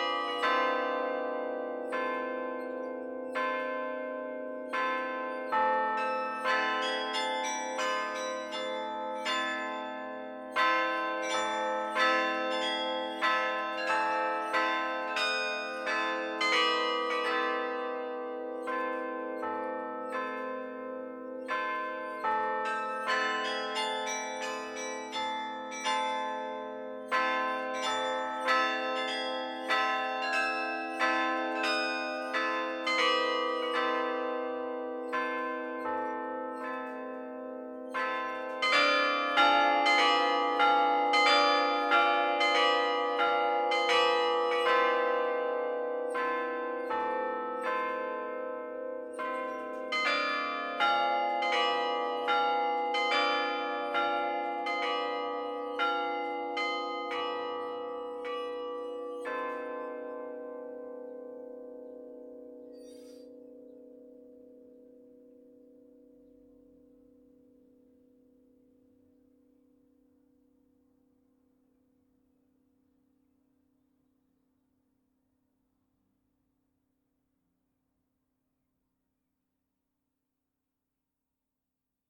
Le Quesnoy - Carillon
Maitre Carillonneur : Mr Charles Dairay